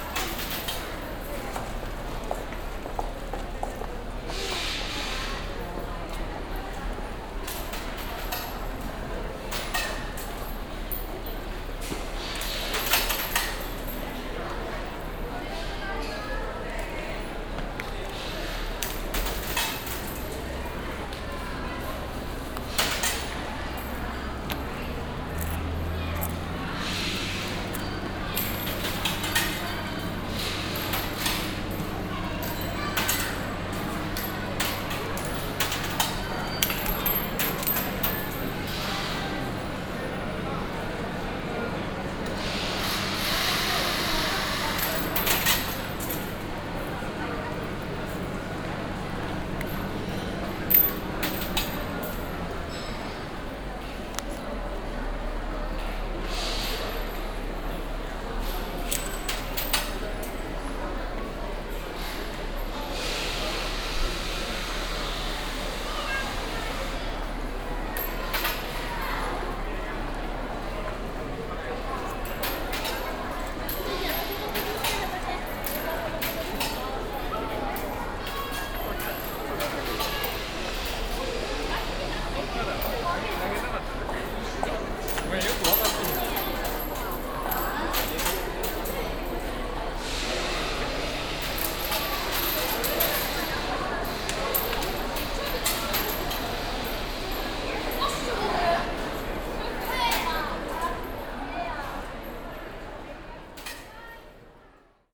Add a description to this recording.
money ceremony at the temple entrance - visitors throw money coins in a big wooden box and then pray there wishes silently, international city scapes - social ambiences and topographic field recordings